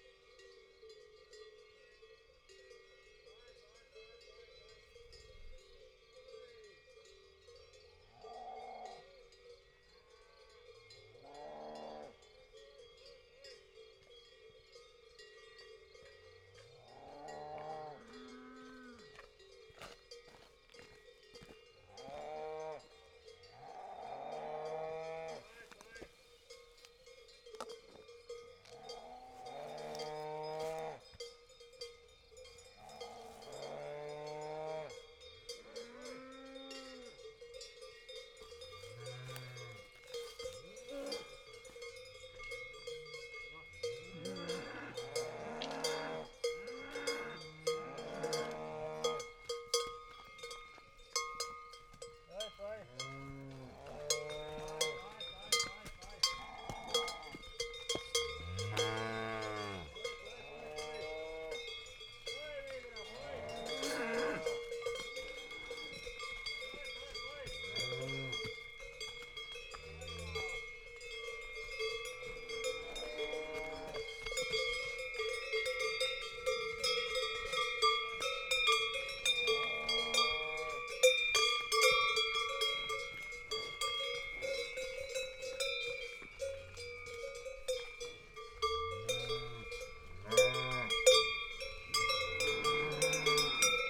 Tolmin, Slovenia - Cows with bells passing.
A shepherd chases cows to a nearby meadow. Lom Uši pro, MixPre II